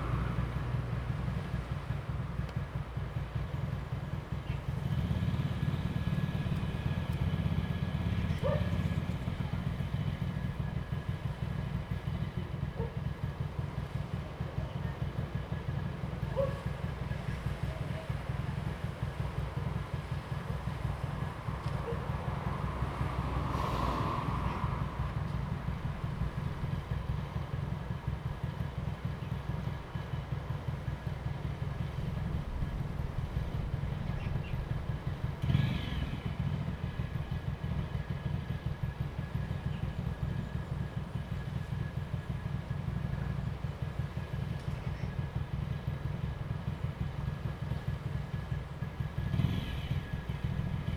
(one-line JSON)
{"title": "Chuanfan Rd., Hengchun Township 恆春鎮 - On the coast", "date": "2018-04-23 06:38:00", "description": "On the coast, Sound of the waves, Birds sound, traffic sound, Dog barking\nZoom H2n MS+XY", "latitude": "21.93", "longitude": "120.82", "altitude": "5", "timezone": "Asia/Taipei"}